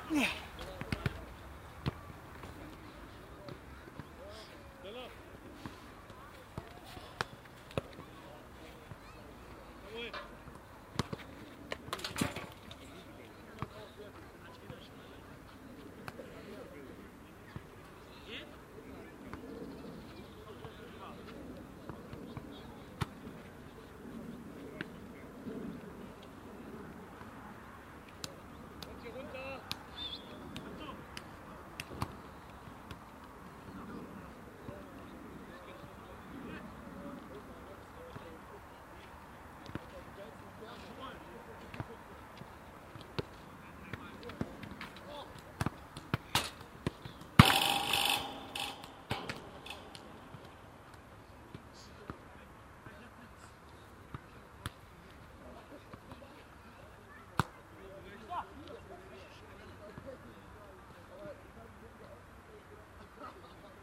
monheim, sportplatz, fussballtraining
soccer training on a sunday morning
project: :resonanzen - neanderland soundmap nrw: social ambiences/ listen to the people - in & outdoor nearfield recordings
18 April, 13:36